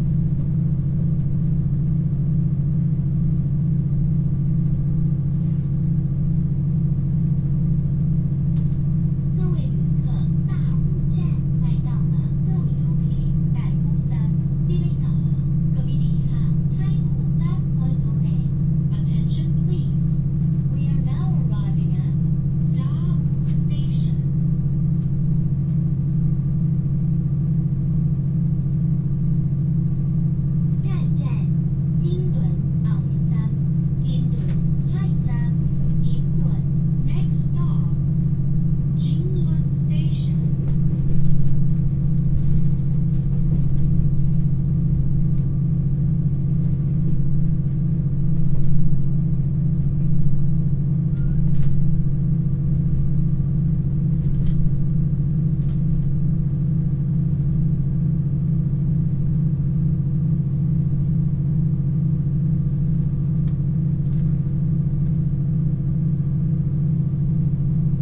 大武鄉南迴鐵路, Dawu Township, Taitung County - Train news broadcast sound
In the train carriage, Train news broadcast sound